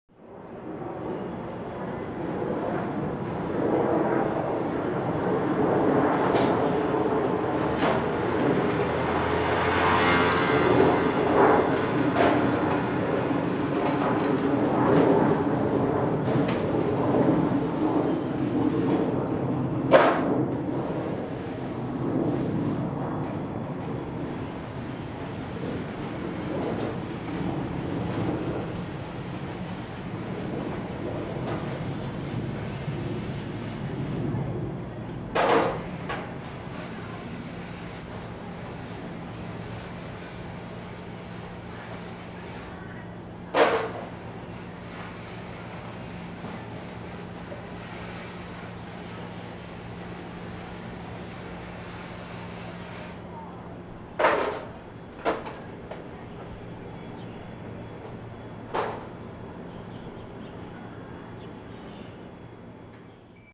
Ln., Huaxin St., Zhonghe Dist., New Taipei City - Aircraft flying through
In a small alley, Old community, Aircraft flying through, Sony ECM-MS907+Sony Hi-MD MZ-RH1